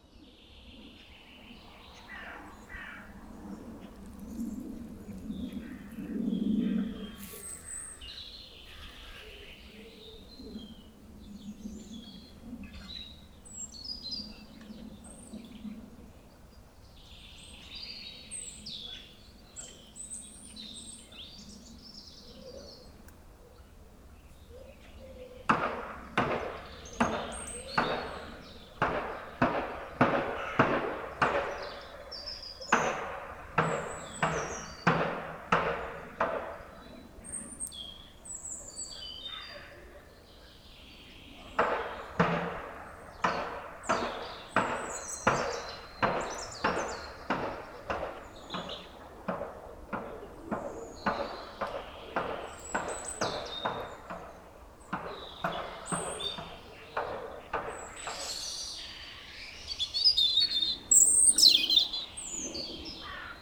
Court-St.-Étienne, Belgique - Rural landscape
In a rural landscape, a person is working in a garden, quite far, and birds sing in the forest.